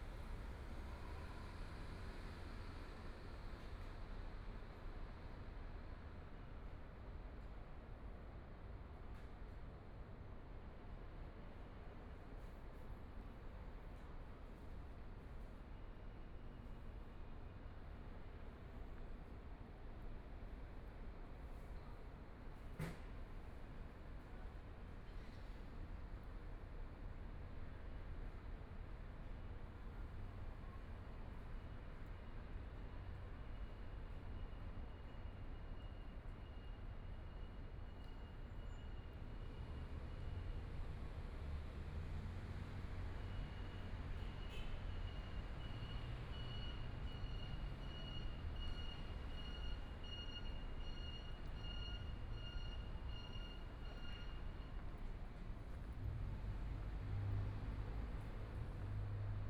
Shuangcheng Park, Taipei City - in the Park
in the Park, Environmental sounds, Traffic Sound, Motorcycle Sound, Pedestrian, Clammy cloudy, Binaural recordings, Zoom H4n+ Soundman OKM II
10 February 2014, 15:38